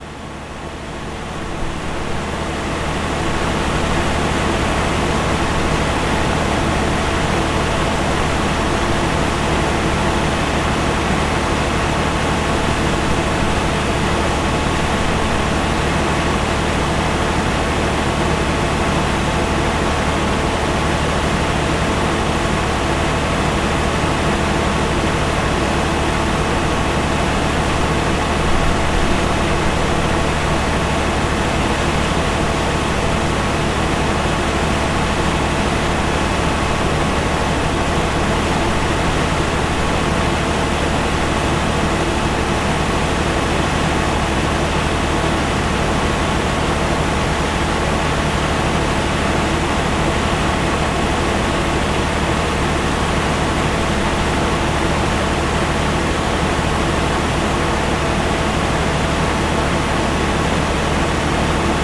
Vytauto pr., Kaunas, Lithuania - Large noisy device
A close-up recording of some kind of large air pump (or maybe generator, or other kind) device. Recorded with ZOOM H5.